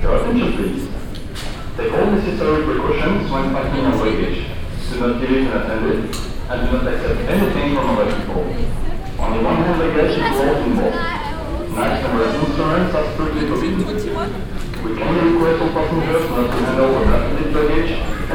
nizza, airport, terminal 1, arrival
in the public arrival area of nizza airport, baggage transportation, steps, voices and announcements
soundmap international: social ambiences/ listen to the people in & outdoor topographic field recordings